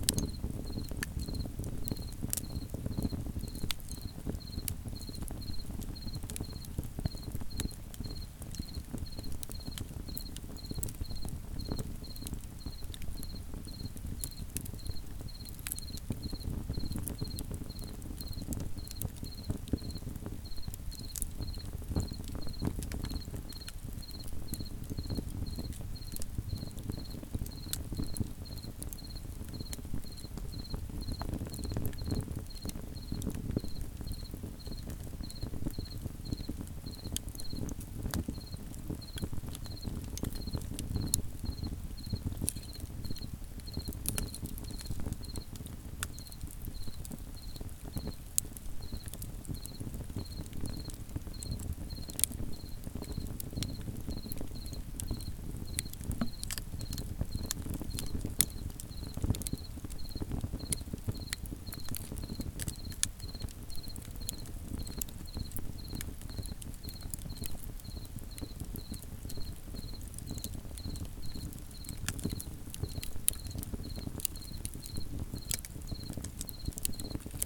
Unnamed Road, Israel - Cricket at Fireside
Stereo Recording of a cricket at our Fireside during a Hiking Trip through the Negev.